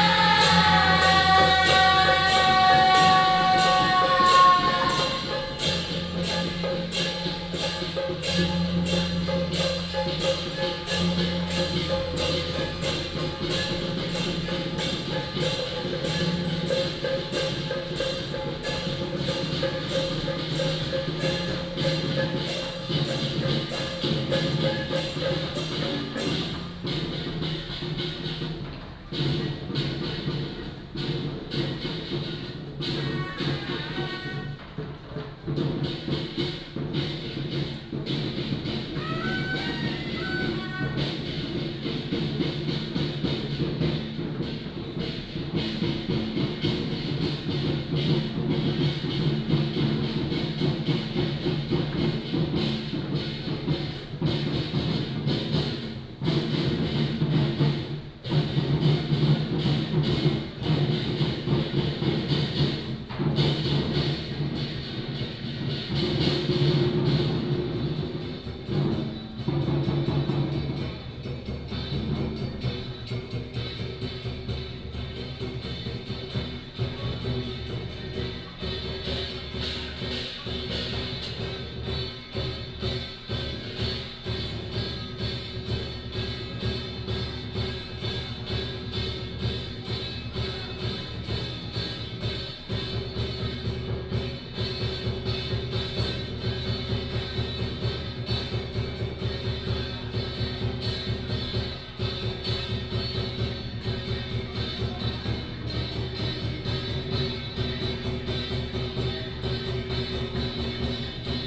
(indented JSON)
{
  "title": "大仁街, Tamsui District - Traditional temple festival parade",
  "date": "2015-05-08 12:16:00",
  "description": "Traditional temple festival parade",
  "latitude": "25.18",
  "longitude": "121.44",
  "altitude": "45",
  "timezone": "Asia/Taipei"
}